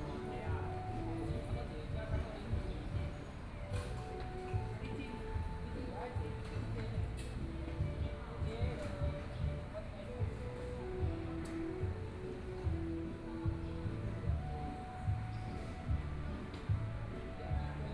旗津區旗下里, Kaoshiung - in front of the temple plaza
Sitting in front of the temple plaza, Very hot weather, Traffic Sound